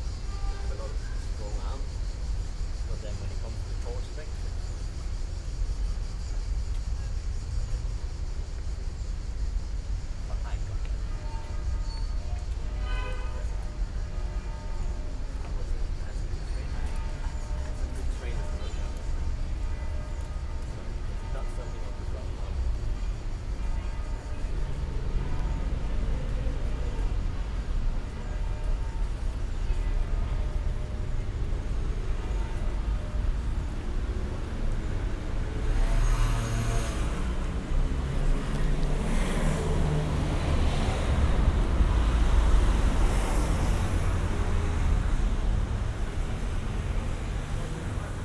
Quartiere VI Tiburtino, Roma, Włochy - In park @ Villa Mercede - binaural
Walk in the park @ Villa Mercede Biblioteca
Roma, Italy, 1 July